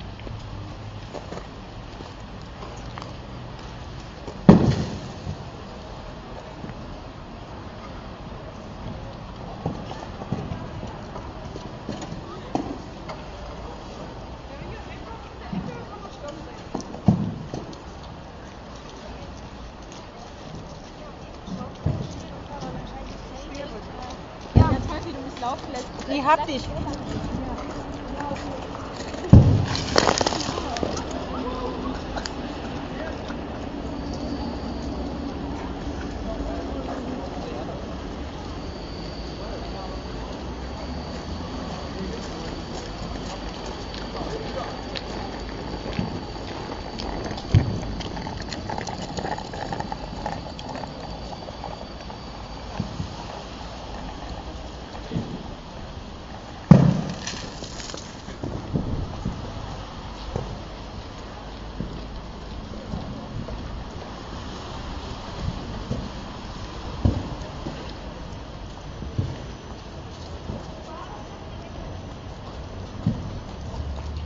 tauwetter at friedrichstraße station
after eight weeks of frost, snow in berlin is finally melting. large amounts of frozen matter have clustered to the rim of the roof of Friedrichstraße station and may fall down at any time. the local firebrigade has set out to climb public buildings and shovel it down to the ground. here we have the deep humming sound of the floes crashing onto the street...
Deutschland, European Union